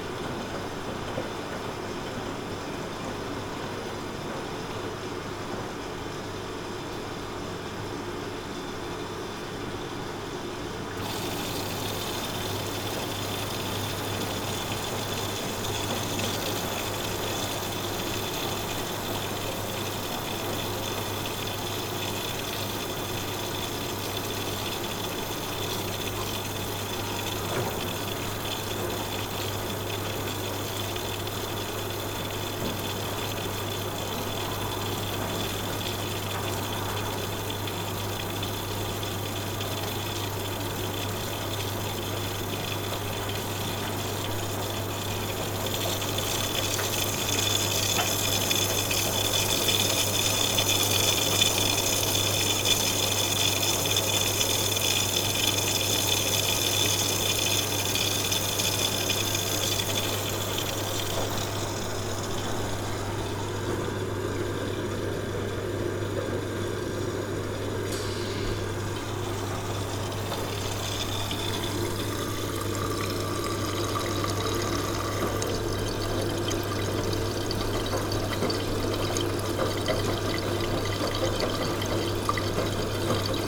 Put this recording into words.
laundy at sunday noon, the recorder turns a rather boring activity into a sonic experience.